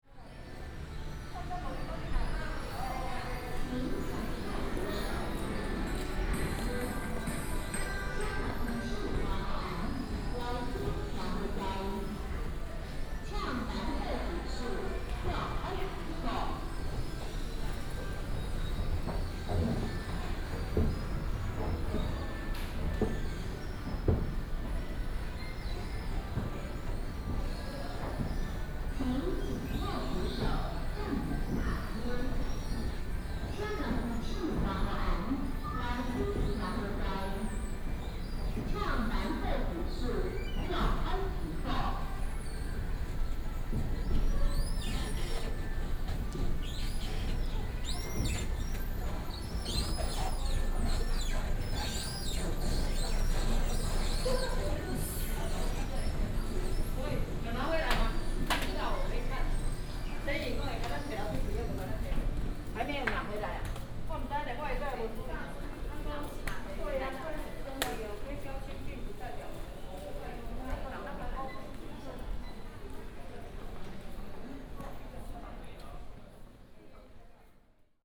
Zhunan Station, 苗栗縣竹南鎮 - Walk into the station
Walk into the station, Escalator